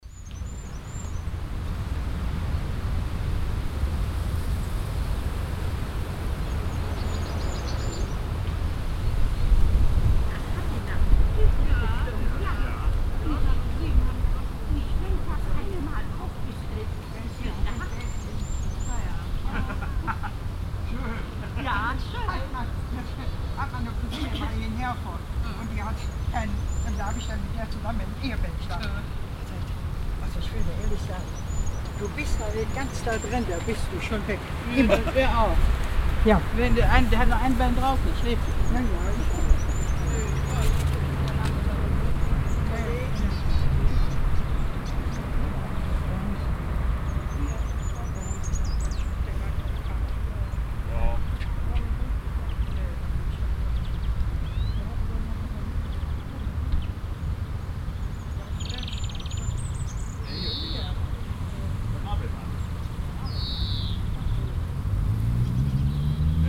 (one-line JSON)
{"title": "mettmann, friedhof lindenheide", "date": "2008-06-16 17:08:00", "description": "friedhof, nachmittags, vogelstimmen, leichter wind, gespräche von grabbesuchern, im hintergrund strassenverkehr\nA graveyard in the early afternoon, birds, a mellow wind, conversation of passing bye, surviving dependants. In the distance the sound of traffic\nproject:resonanzen - neanderland - soundmap nrw\nproject: social ambiences/ listen to the people - in & outdoor nearfield recordings", "latitude": "51.26", "longitude": "7.00", "altitude": "164", "timezone": "Europe/Berlin"}